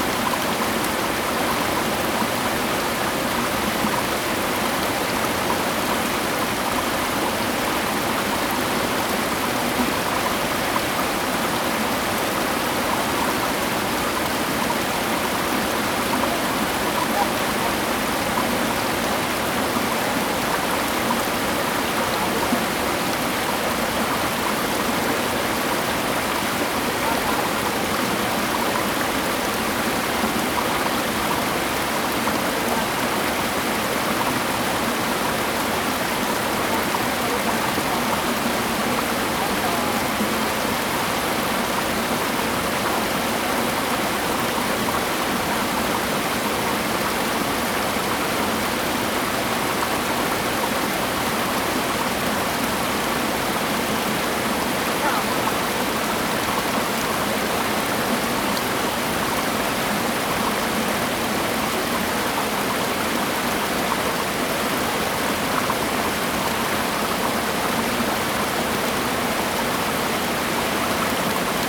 猴洞坑溪, 礁溪鄉白雲村 - stream
stream, waterfall
Zoom H2n MS+ XY
Yilan County, Taiwan, 7 December, 13:10